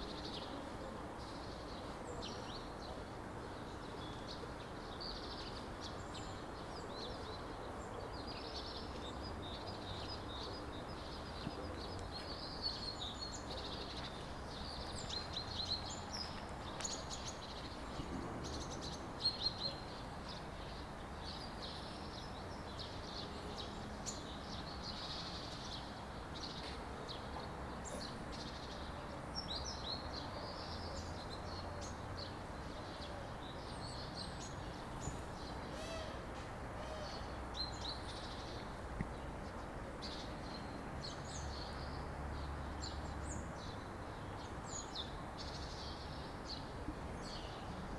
вулиця Трудова, Костянтинівка, Донецька область, Украина - Звуки воробьев
Щебет воробьев
Звук: Zoom H2n